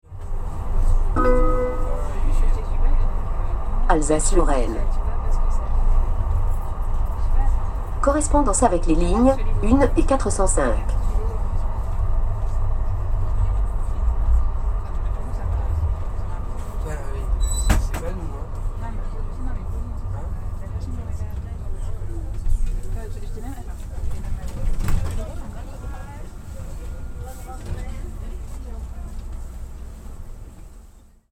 Agn s at work Alsace-Lorraine RadioFreeRobots